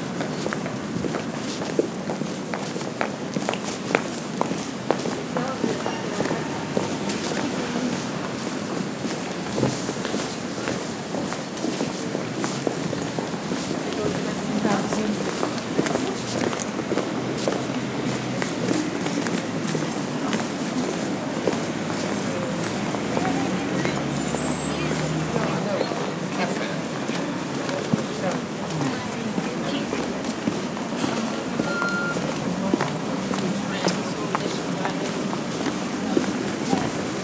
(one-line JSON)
{"title": "praha - namestirepubliky", "date": "2010-11-08 13:11:00", "description": "walking, prague sound", "latitude": "50.09", "longitude": "14.43", "altitude": "206", "timezone": "Europe/Prague"}